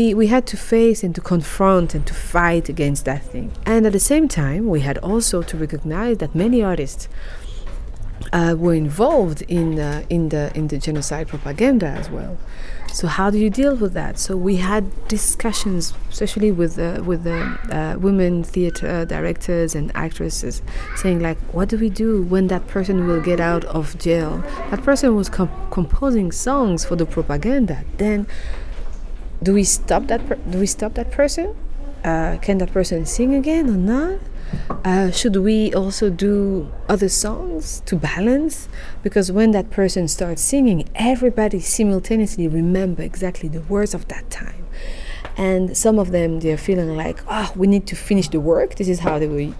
City Library, Hamm, Germany - The heritage of genocide…
… Carole continues telling us how artists, and especially women artists picked up the task of facing the heritage of genocide und of healing social trauma…
16 June 2014